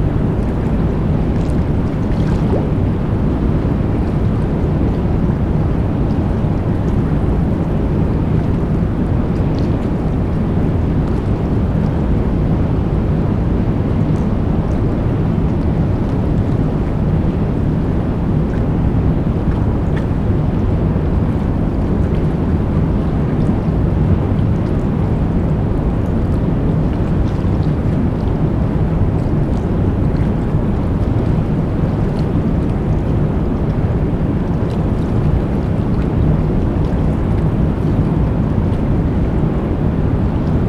waters, as living structures, are in constant change. this is monstrous drone of dam